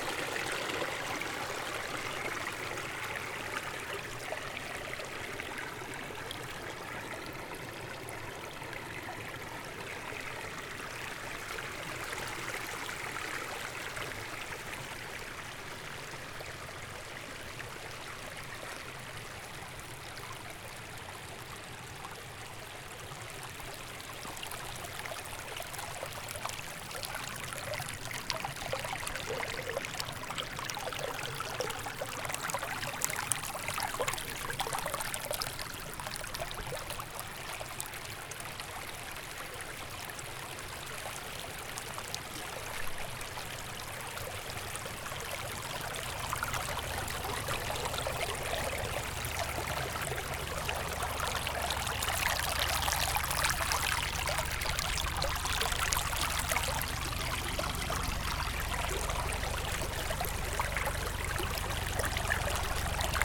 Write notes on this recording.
Bach mit viel Wasser, wahrscheinlich Schmelze, sehr sauber, keine Nebengeräusche